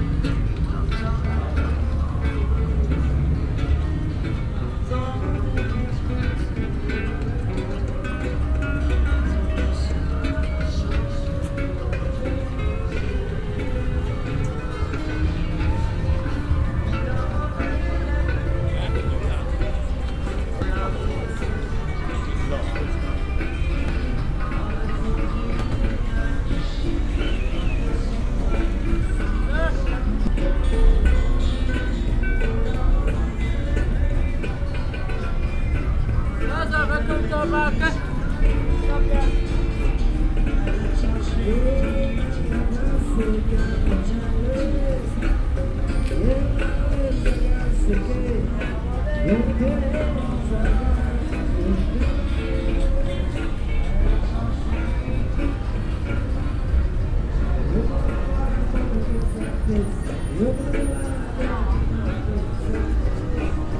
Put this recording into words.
beijing cityscape - night atmosphere at hun jin, lakeside touristic funpark, with live music bars playing music parallel all outside - place maybe not located correctly -please inform me if so, project: social ambiences/ listen to the people - in & outdoor nearfield recordings